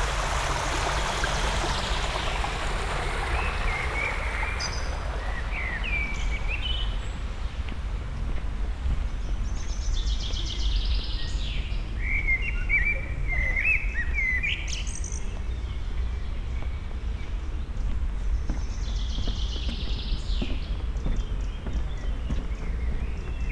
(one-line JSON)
{"title": "Vallée de la Pétrusse, Luxemburg - walking along the canal", "date": "2014-07-05 20:45:00", "description": "walking along a canal in Vallée de la Pétrusse, the valley within the city\n(Olympus LS5, Primo EM172)", "latitude": "49.61", "longitude": "6.13", "altitude": "278", "timezone": "Europe/Luxembourg"}